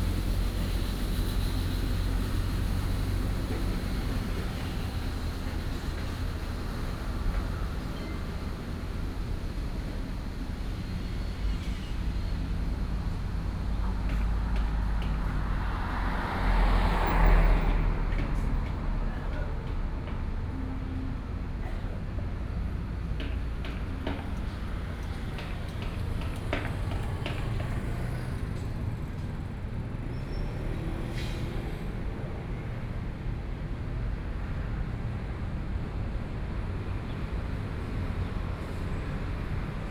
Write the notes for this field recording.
Sitting in front of the temple, Sony PCM D50 + Soundman OKM II